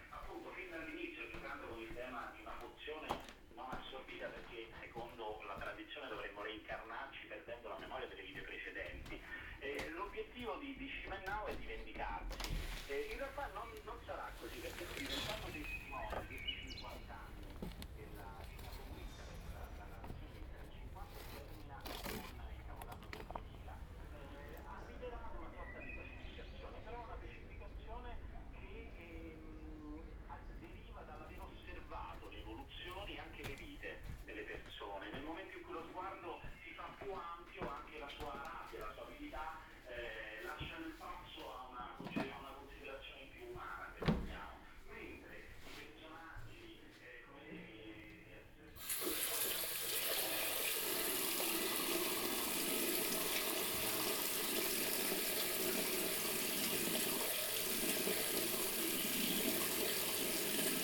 "It’s five o’clock on Thursday with bells, post-carding and howling dog in the time of COVID19" Soundwalk
Chapter XC of Ascolto il tuo cuore, città. I listen to your heart, city
Thursday, May 28th 2020. San Salvario district Turin, walking to Corso Vittorio Emanuele II and back, seventy-nine days after (but day twenty-five of Phase II and day twelve of Phase IIB and day six of Phase IIC) of emergency disposition due to the epidemic of COVID19.
Start at 4:50 p.m. end at 5:19 p.m. duration of recording 29’13”
The entire path is associated with a synchronized GPS track recorded in the (kmz, kml, gpx) files downloadable here:
Torino, Piemonte, Italia, 28 May 2020